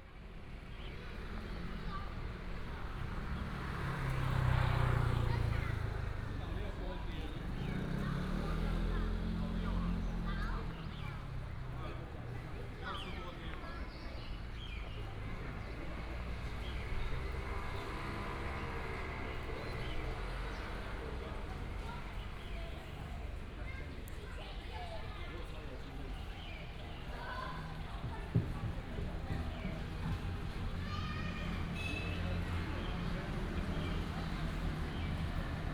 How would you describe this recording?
in the Park, sound of the birds, traffic sound, Child